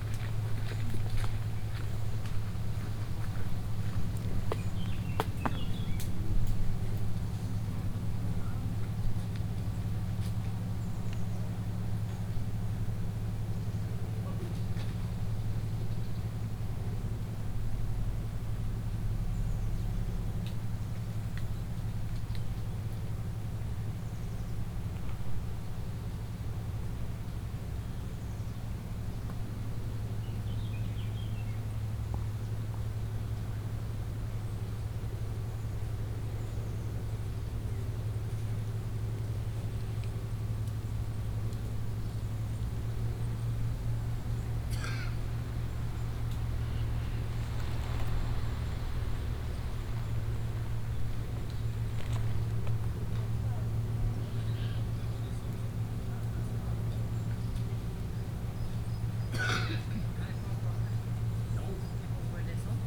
La Spezia province, Cinque Terre national park - trail 6 towards Volastra
on a mountain trail towards Volastra. hikers passing by occasionally. diesel engine of a ferry coming from the sea far away.
La Spezia, Italy, 5 September 2014